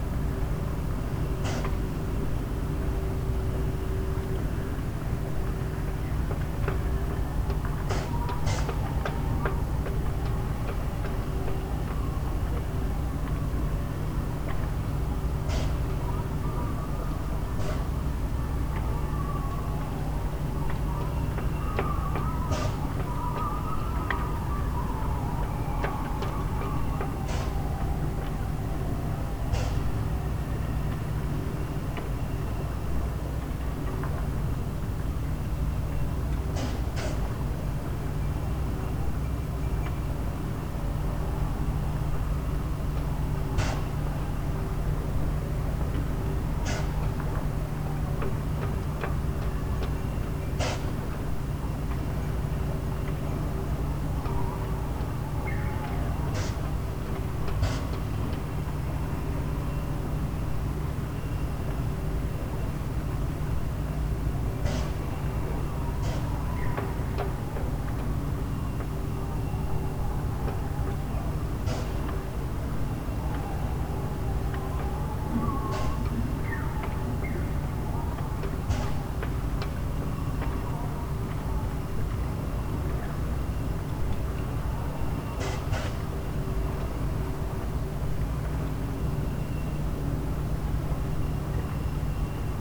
lemmer, vuurtorenweg: parkplatz - the city, the country & me: parking area vis-à-vis of a concrete factory
drone and mechanical noise of a concrete factory, wind blows through sailboat masts and riggings
the city, the country & me: june 21, 2011
Lemmer, The Netherlands